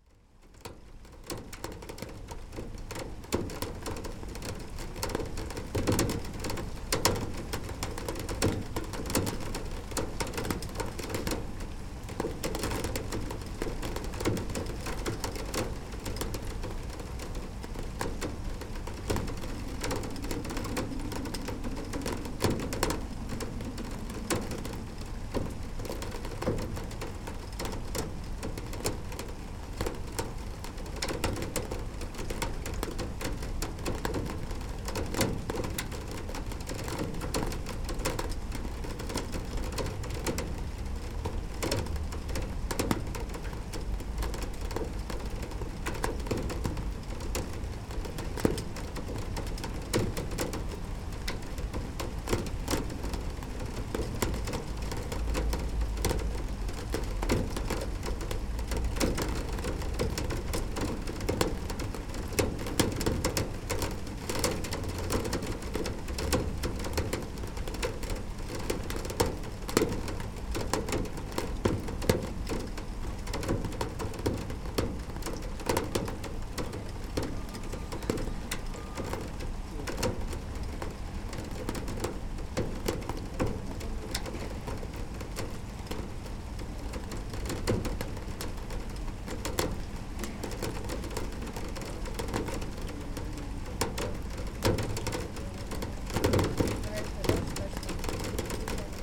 Saint-Fargeau, Paris, France - Rain on a Roller shutter box CLOSE
Pluie sur un coffret de volet roulant... si si le truc pour les stores la.
Rain falling on a roller shutter box at the window, a little ambiance of the city.
/Oktava mk012 ORTF & SD mixpre & Zoom h4n